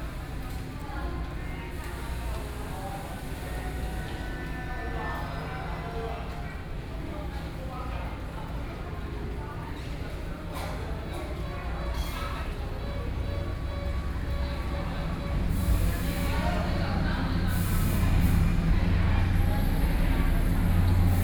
Toucheng Station, Taiwan - In the station hall
In the station hall, Train stop noise, Binaural recordings, Zoom H4n+ Soundman OKM II